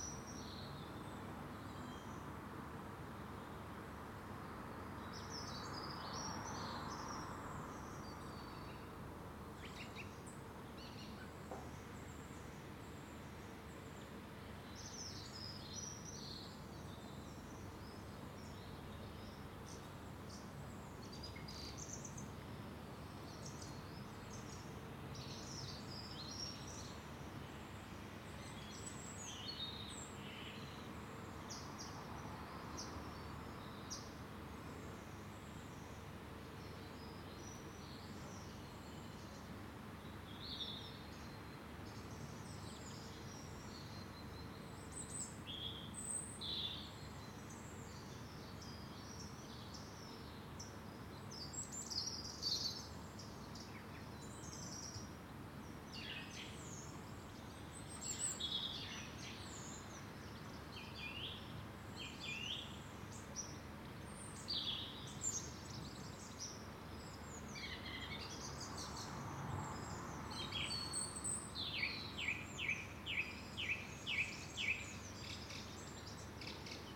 Contención Island Day 49 inner northeast - Walking to the sounds of Contención Island Day 49 Monday February 22nd
The Poplars Roseworth Avenue The Grove Roseworth Crescent Roseworth Close
An unlikely haven
from the sounds of traffic
An ivy-grown wall
two pruned birch trees beyond
Birds flick through the shrubbery
The delivery man places the parcel
rings the bell
and leaves